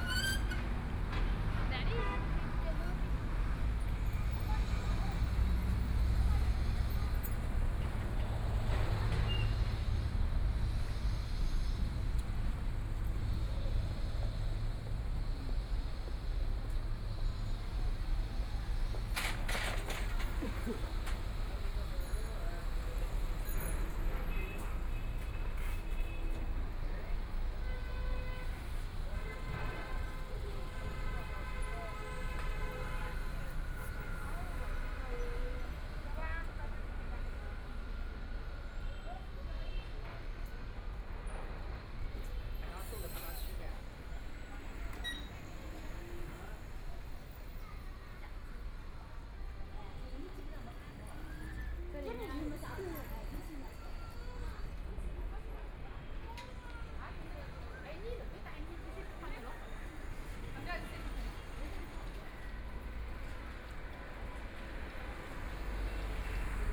Jingling East Road, Shanghai - in the Street
Walking in the Many musical instrument company, Traffic Sound, Binaural recording, Zoom H6+ Soundman OKM II